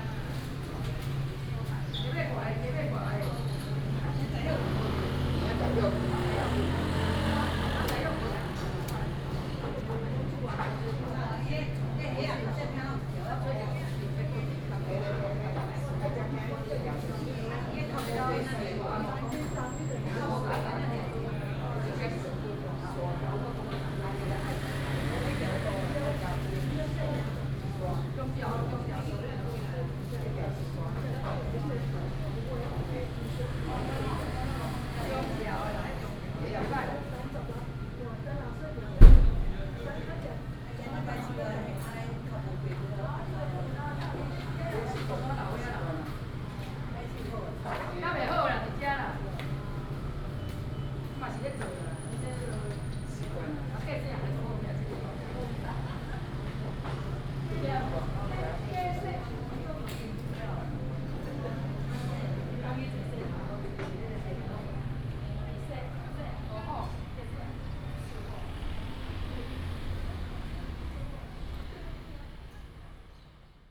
{
  "title": "小康市場, Nantun Dist., Taichung City - walking in the market",
  "date": "2017-09-24 10:35:00",
  "description": "walking in the market, traffic sound, Public retail market, Binaural recordings, Sony PCM D100+ Soundman OKM II",
  "latitude": "24.15",
  "longitude": "120.63",
  "altitude": "74",
  "timezone": "Asia/Taipei"
}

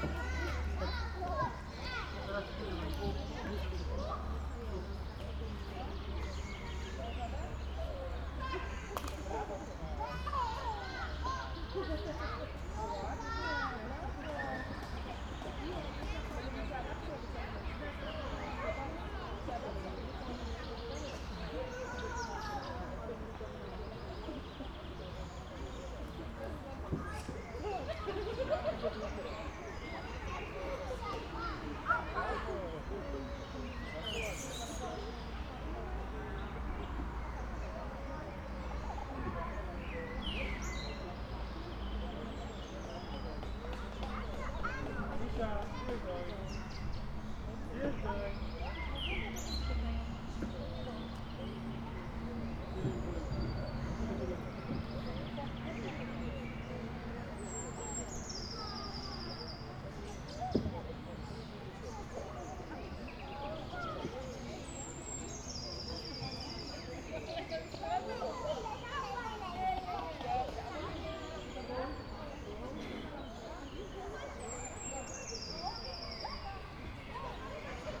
{
  "title": "playground, Park Górnik, Siemianowice Śląskie - park and playground ambience",
  "date": "2019-05-20 17:20:00",
  "description": "afternoon ambience in Park Górnik, kids playing, distant rush hour traffic\n(Sony PCM D50)",
  "latitude": "50.32",
  "longitude": "19.01",
  "altitude": "289",
  "timezone": "GMT+1"
}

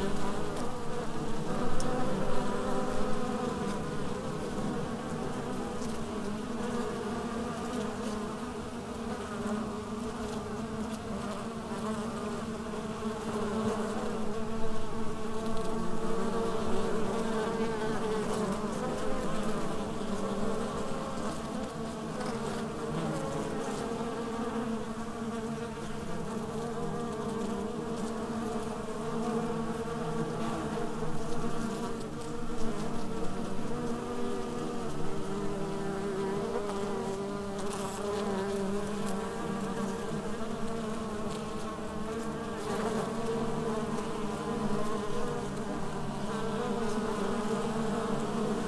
{
  "title": "appelboom: bees under a pear tree - KODAMA document",
  "date": "2009-09-06 12:00:00",
  "description": "Bees recorded under a pear tree, by Hitoshi Kojo, during the KODAMA residency - September 2009",
  "latitude": "45.68",
  "longitude": "2.15",
  "altitude": "760",
  "timezone": "Europe/Berlin"
}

